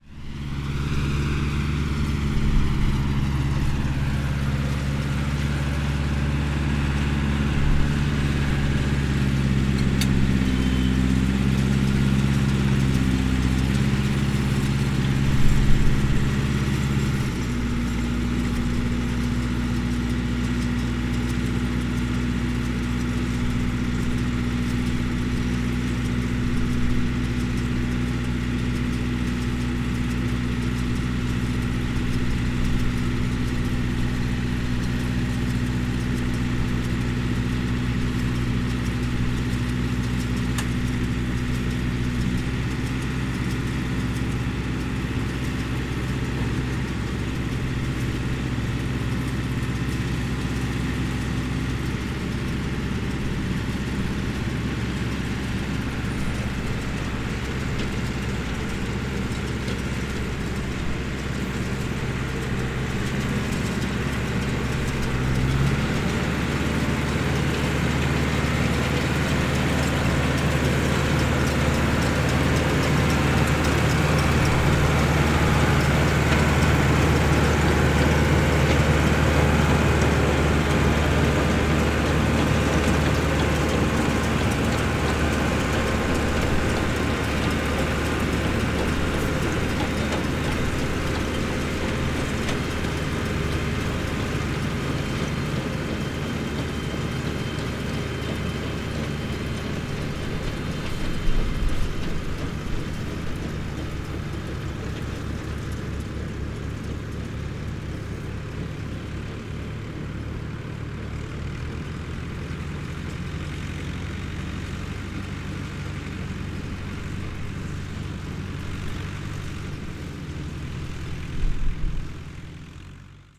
Tractor y empacadora operando en un campo a las afueras de Cerezales del Condado.
León, Spain